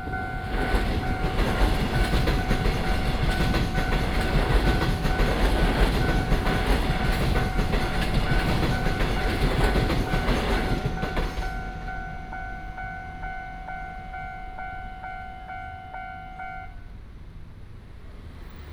普義里, Zhongli Dist., Taoyuan City - Small railway crossroads
Small railway crossroads, traffic sound, The train runs through